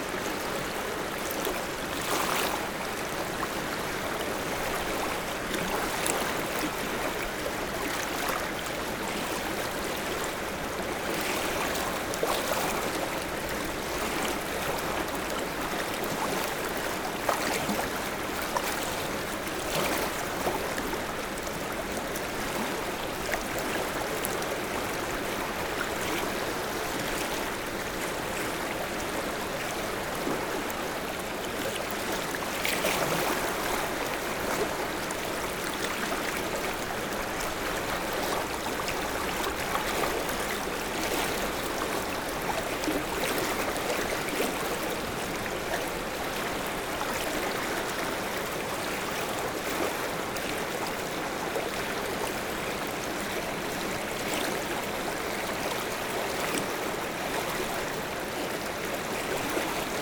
Tours, France - Loire river
Recording of the Loire river, flowing in the center of Tours. There's waves because of a small dam in the river. Elsewhere, the river is a lake without any noise.
August 2017